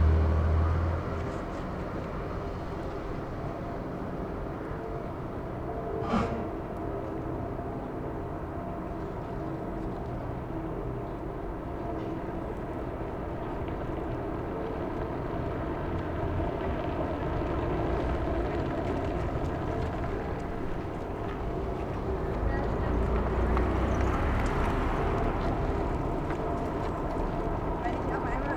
Berlin: Vermessungspunkt Maybachufer / Bürknerstraße - Klangvermessung Kreuzkölln ::: 13.02.2011 ::: 18:03
Berlin, Germany, 13 February 2011, 18:03